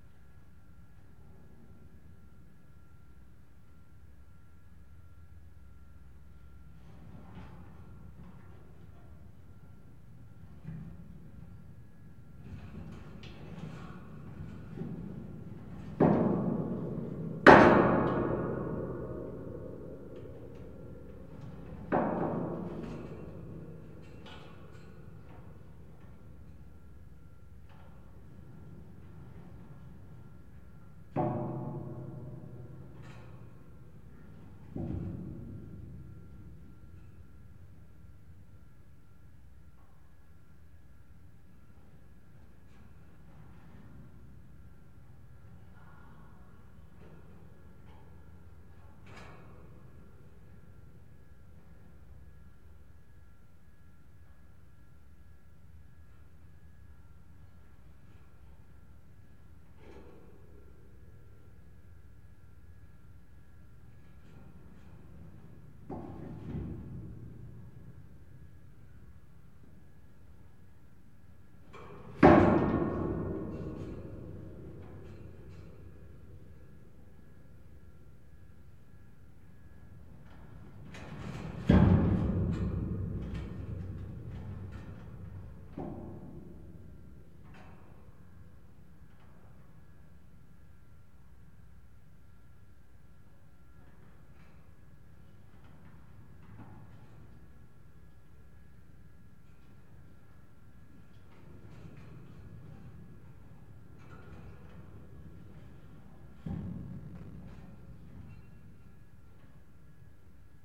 {"title": "Bd Armand Duportal, Toulouse, France - metalic vibration 03", "date": "2022-03-26 17:32:00", "description": "steel portal + Wind\nCaptation ZOOMh4n + C411PP", "latitude": "43.61", "longitude": "1.44", "altitude": "142", "timezone": "Europe/Paris"}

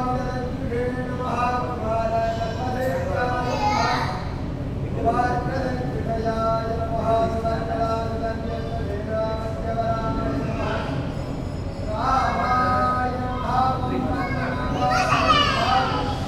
{"title": "Kallang, Singapur - drone log 17/02/2013 b", "date": "2013-02-17 12:52:00", "description": "Sri Srinivasa Perumal-Temple\n(zoom h2, build in mic)", "latitude": "1.31", "longitude": "103.86", "altitude": "13", "timezone": "Asia/Singapore"}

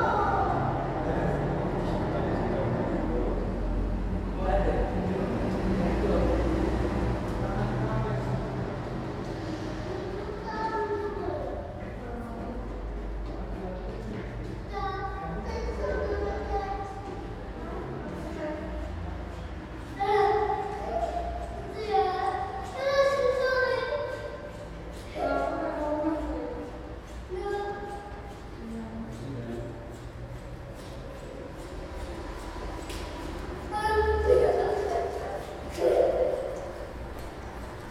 {"title": "Ústí nad Labem-město, Česká republika - Bouncing ball in the corridor", "date": "2013-02-16 16:56:00", "description": "Bouncing basketball in the pedestian underground corridor, which serves as a sound gallery Podchod po skutečností.", "latitude": "50.66", "longitude": "14.04", "altitude": "152", "timezone": "Europe/Prague"}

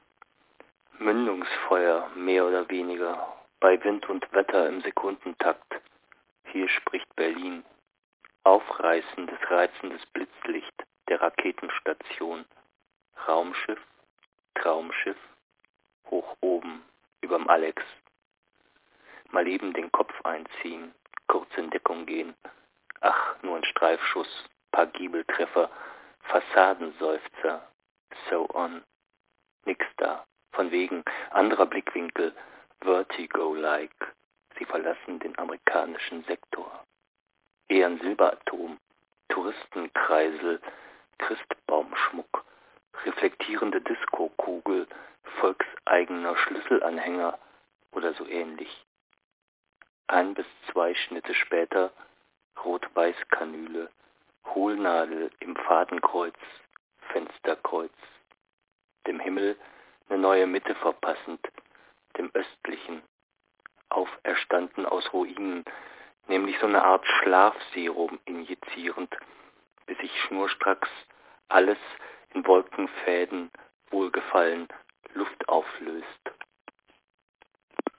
{"title": "Panoramastr., Mitten in Mitte - Mitten in Mitte - hsch ::: 26.03.2007 18:18:39", "description": "phone call to radio aporee ::: maps - Panoramastr.", "latitude": "52.52", "longitude": "13.41", "altitude": "41", "timezone": "GMT+1"}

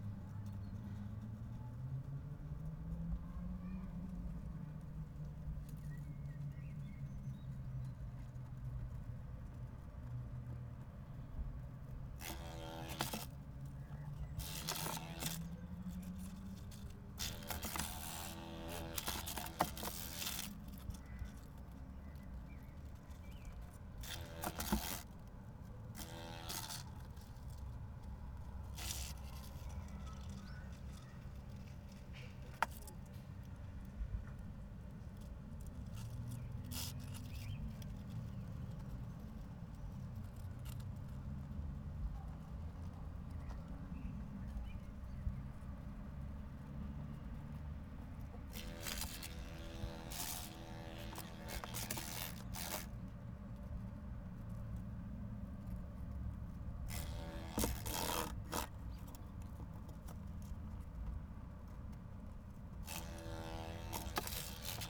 {"title": "Poznan, balcony - humble-bee in a bucket", "date": "2013-07-04 21:35:00", "description": "a stray, tired humble-bee trying to get out of a plastic bucket", "latitude": "52.46", "longitude": "16.90", "timezone": "Europe/Warsaw"}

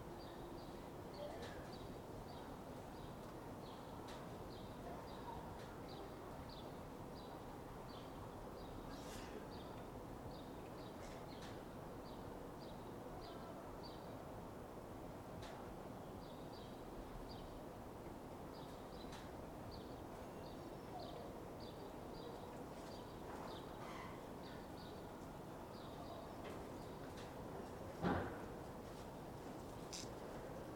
{"title": "Rue Proudhon, Saint-Denis, France - Pallissade and wind in a very little street-YC", "date": "2020-04-12 21:34:00", "description": "Le long de palissade de chantier, du vent les agite, a St Denis durant le confinement", "latitude": "48.91", "longitude": "2.36", "altitude": "39", "timezone": "Europe/Paris"}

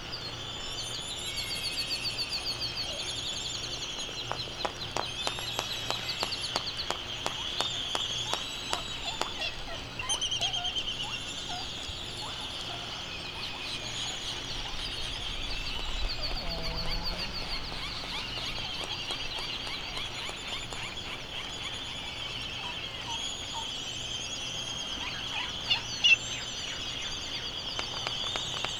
{"title": "United States Minor Outlying Islands - Laysan Albatross soundscape ...", "date": "2012-03-16 18:05:00", "description": "Sand Island ... Midway Atoll ... open lavalier mics ... bird calls ... laysan albatross ... white terns ... black noddy ... bonin petrels ... canaries ... background noise ...", "latitude": "28.22", "longitude": "-177.38", "altitude": "9", "timezone": "Pacific/Midway"}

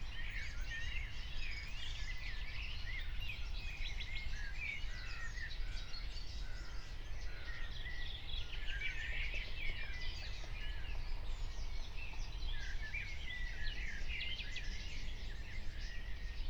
{"date": "2021-06-16 03:45:00", "description": "03:45 Berlin, Wuhletal - Wuhleteich, wetland", "latitude": "52.53", "longitude": "13.58", "altitude": "40", "timezone": "Europe/Berlin"}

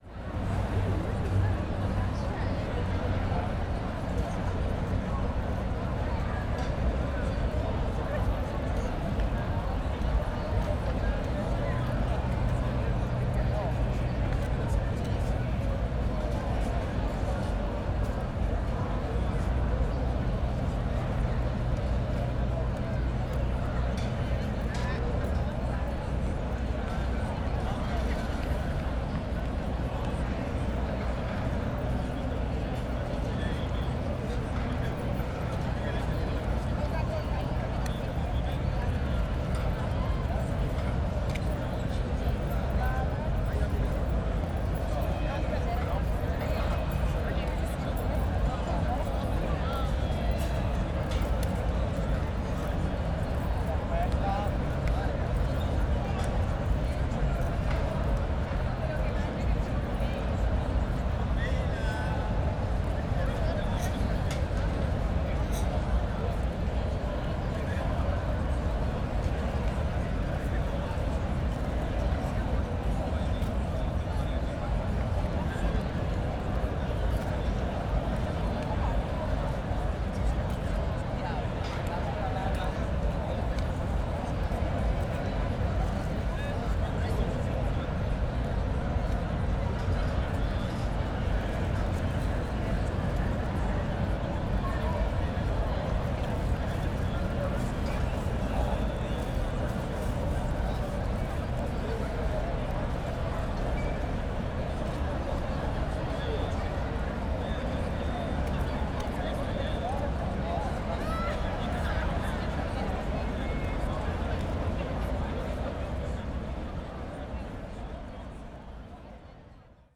{"title": "Piazza Unita d'Italia, Trieste - night ambience on square", "date": "2013-09-08 00:45:00", "description": "weekend night ambience at Piazza Unita\n(SD702, DPA4060)", "latitude": "45.65", "longitude": "13.77", "altitude": "5", "timezone": "Europe/Rome"}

